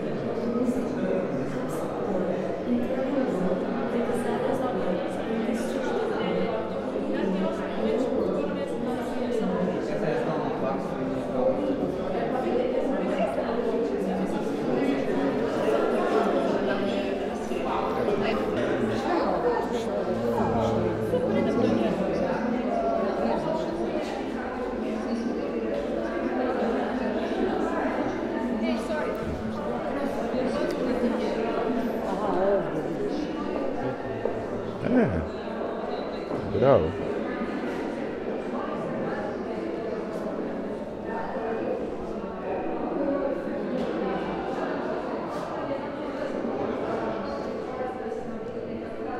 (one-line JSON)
{"title": "Rijeka, Croatia, Night Of Museums - Night Of Museums 2017 - MMSU", "date": "2017-01-27 20:15:00", "description": "Night Of Museums 2017 Rijeka", "latitude": "45.33", "longitude": "14.44", "altitude": "20", "timezone": "GMT+1"}